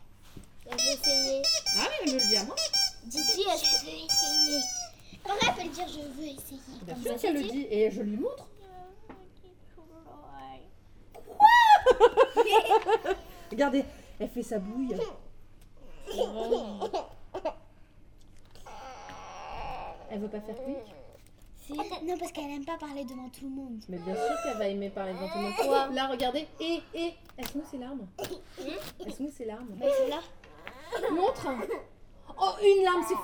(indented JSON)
{
  "title": "Lucé, France - The child who didn't want to speak to the adults",
  "date": "2017-08-05 17:00:00",
  "description": "We are here into the entrance of the Lucé library. Children use this place as a playful spot. During the long summer holidays, there's nothing to do on the surroundings, especially in Chartres city, despising deeply the poor people living here. It's very different in Lucé as the city is profoundly heedful of this community. In fact, it means the library forms a small paradise for aimless children. These children are accustomed and come every day.\nOn this saturday evening, the library will close in a few time. Chidren play, joke, and discuss with the employees. Four children siblings are especially active and noisy. Rim (it's her first name) is a small child, I give her four years, nothing more. She doesn't want to talk to the adults. When she wants something, she asks her sisters to speak to the adult. As an education, adults refuse to answer her and kindly force her to speak to them. It's difficult for her and she's crying every time.\nIt's a completely improvised recording.",
  "latitude": "48.44",
  "longitude": "1.47",
  "altitude": "157",
  "timezone": "Europe/Paris"
}